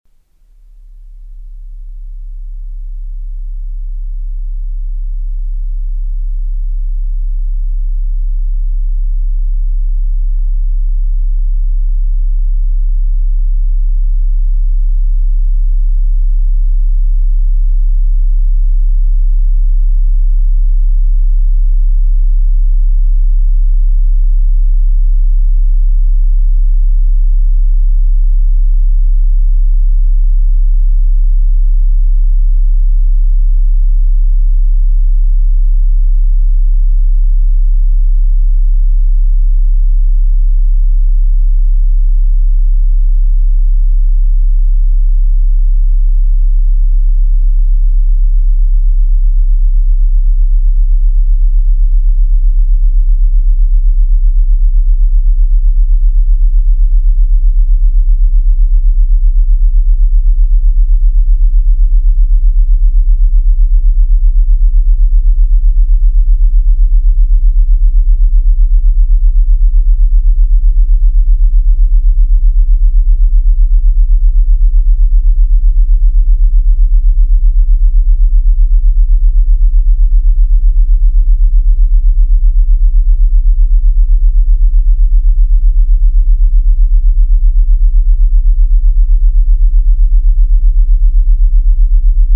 fröndenberg, niederheide, garage of family harms
inside the garage of family harms, a temporary sound and light installation by islandic artist finnbogi petursson during the biennale for international light art 2010
soundmap nrw - social ambiences and topographic field recordings